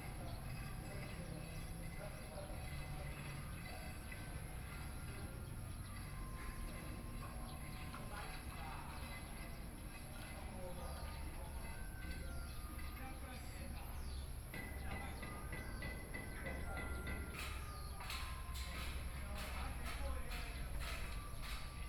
{"title": "岳明國小, Su'ao Township - In front of the primary school", "date": "2014-07-28 17:54:00", "description": "In front of the primary school, Hot weather, Traffic Sound, Birdsong sound, Small village, Garbage Truck, Sound from Builders Construction", "latitude": "24.61", "longitude": "121.85", "altitude": "7", "timezone": "Asia/Taipei"}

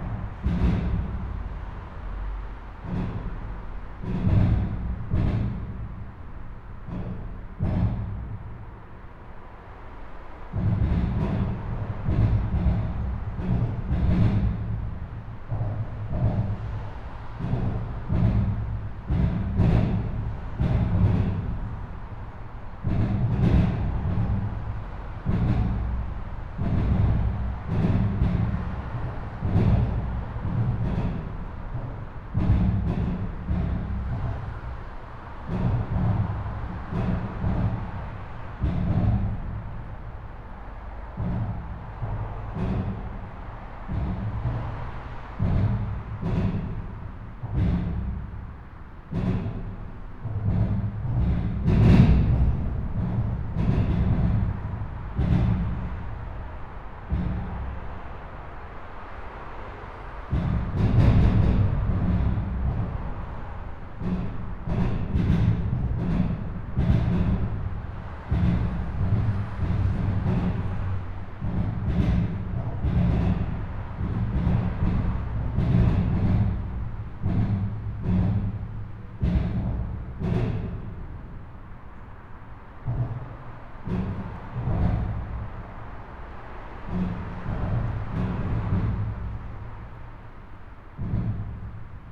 {"title": "Dietikon, Schweiz - Limmat Autobahnbrücke", "date": "2016-10-15 14:24:00", "description": "If you walk along the Limmat coming from Zürich you will come across a highwaybridge in Dietikon and this unintended drummachine", "latitude": "47.40", "longitude": "8.42", "altitude": "391", "timezone": "Europe/Zurich"}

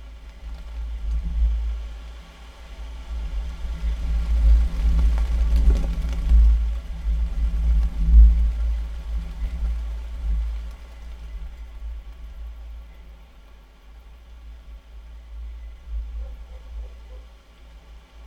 {"title": "Medeniai, Lithuania, in metallic tube", "date": "2013-07-07 14:15:00", "description": "small microphones placed in vertical metallic tube. play of wind and resonances", "latitude": "55.50", "longitude": "25.68", "altitude": "165", "timezone": "Europe/Vilnius"}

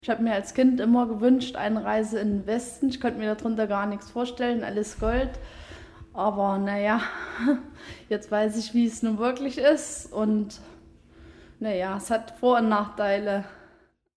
{"title": "pfaffschwende - goldener hirsch", "date": "2009-08-08 22:51:00", "description": "Produktion: Deutschlandradio Kultur/Norddeutscher Rundfunk 2009", "latitude": "51.25", "longitude": "10.10", "altitude": "347", "timezone": "Europe/Berlin"}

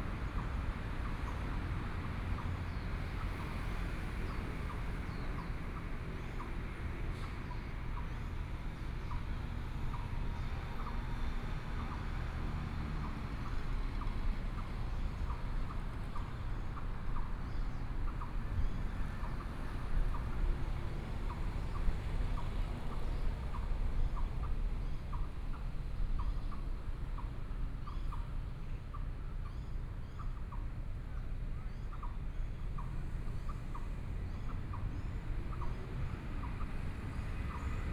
{"title": "左營區自助里, Kaohsiung City - in the Park", "date": "2014-05-15 12:13:00", "description": "in the Park, Hot weather, Birds", "latitude": "22.68", "longitude": "120.29", "altitude": "16", "timezone": "Asia/Taipei"}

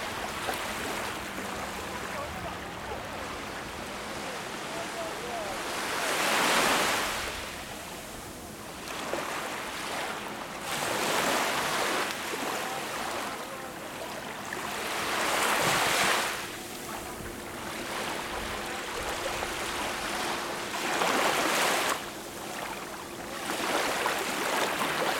{"title": "Blvd. Mohamed VI, Tanger, Morocco - الشاطئ البلدي (Plage municipale)", "date": "2020-01-04 17:22:00", "description": "People enjoy walking and gathering in the evening along the beach, الشاطئ البلدي (Plage municipale)\n(Zoom H5)", "latitude": "35.78", "longitude": "-5.80", "altitude": "1", "timezone": "Africa/Casablanca"}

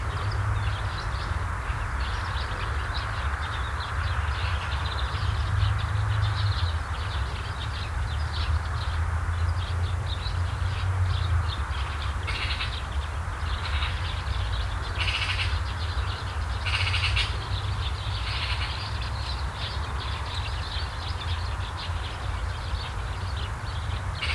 {"title": "Poznan, near Deszczowa Rd. - bird custer", "date": "2014-11-01 14:26:00", "description": "a group of birds occupying a bunch of bushes nearby.", "latitude": "52.47", "longitude": "16.91", "altitude": "95", "timezone": "Europe/Warsaw"}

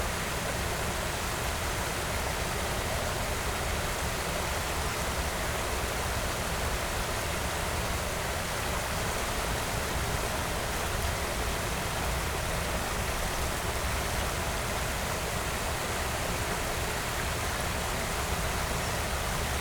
{"title": "Biesdorf, Berlin, Deutschland - river Wuhle, pond", "date": "2016-04-16 11:15:00", "description": "observing a heron while recording the river Wuhle at a small pond, Biersdorf, Berlin\n(SD702, DPA4060)", "latitude": "52.49", "longitude": "13.57", "altitude": "35", "timezone": "Europe/Berlin"}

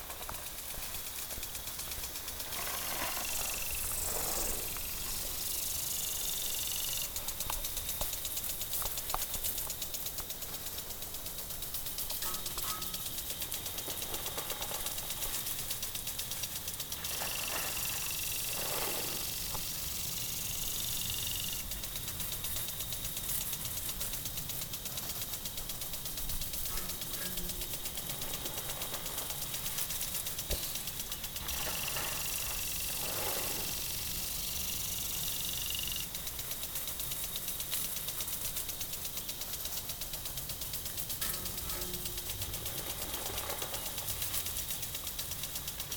{"title": "alto, water sprinkle system", "date": "2009-07-25 18:42:00", "description": "watersprinkle system activated automatically in the morning time\nsoundmap international: social ambiences/ listen to the people in & outdoor topographic field recordings", "latitude": "44.11", "longitude": "8.01", "altitude": "650", "timezone": "Europe/Berlin"}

